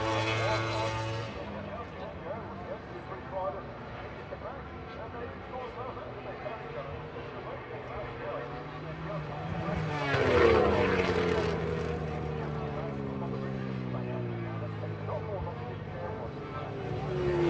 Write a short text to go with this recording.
British Motorcycle Grand Prix 2005 ... MotoGP ... FP3(contd) ... Donington ... commentary ... one point stereo mic to minidisk ...